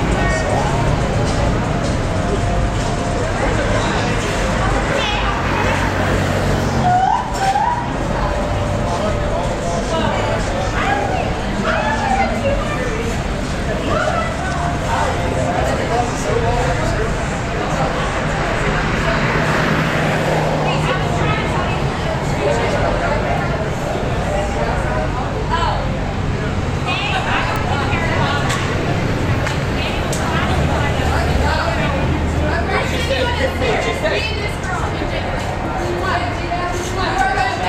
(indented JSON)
{
  "title": "Muhlenberg College Hillel, West Chew Street, Allentown, PA, USA - Outside the Liberty Street Tavern",
  "date": "2014-12-10 16:02:00",
  "description": "Students drinking and talking outside of the Tavern on Liberty Street",
  "latitude": "40.60",
  "longitude": "-75.51",
  "altitude": "118",
  "timezone": "America/New_York"
}